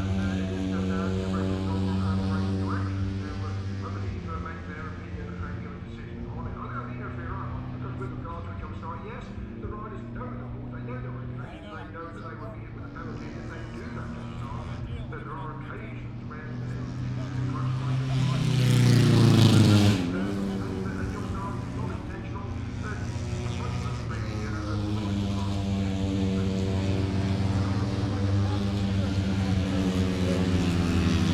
british motorcycle grand prix 2019 ... moto three ... free practice one ...contd ... inside maggotts ... some commentary ... lavalier mics clipped to bag ... background noise ... the disco in the entertainment zone ..?